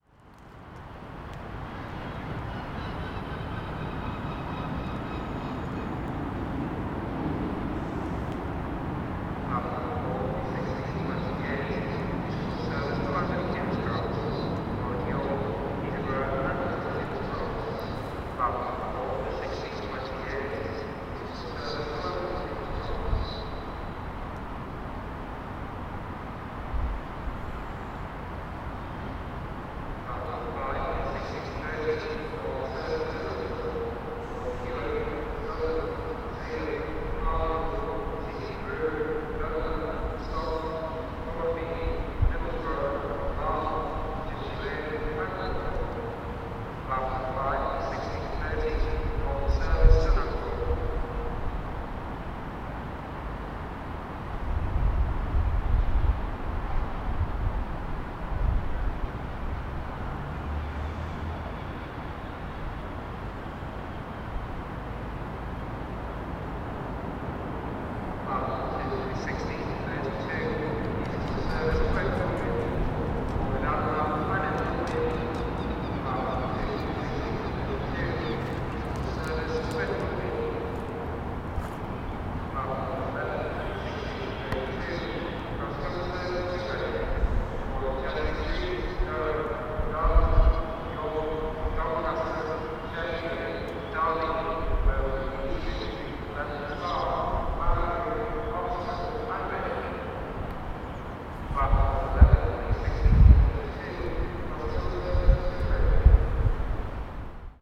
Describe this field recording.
Slightly outside Newcastle Central Station. Newcastle upon Tyne. Trains arrival and speakers call.